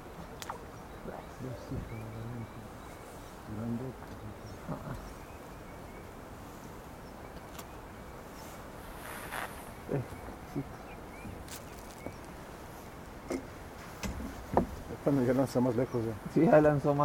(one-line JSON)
{"title": "Azuay, Ecuador - Fishermen at the dock", "date": "2015-09-04 18:30:00", "description": "El Cajas national park, Llaviucu Lake.\nReturning from the river west from the lake, I met a family of fishermen which were staying at the dock for the night.\nRecorded with TASCAM DR100 built-in mics and a homemade windshield.", "latitude": "-2.84", "longitude": "-79.15", "altitude": "3175", "timezone": "America/Guayaquil"}